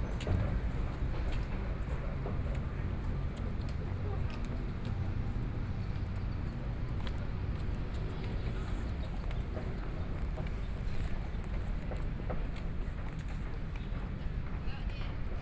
碧湖公園, Taipei City - walking in the Park
walking in the Park, Aircraft flying through, Many people are walking and jogging